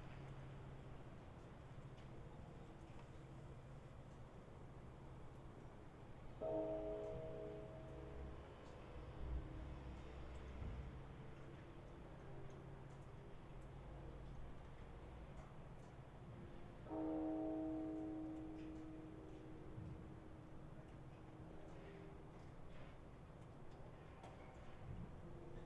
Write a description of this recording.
New Year temple bells and fireworks, beginning just before 1 January 2015. The recording was trimmed with Audacity on CentOS (Linux). No other processing was done. Max amplitude -2.2dB was preserved as-is from the recorder.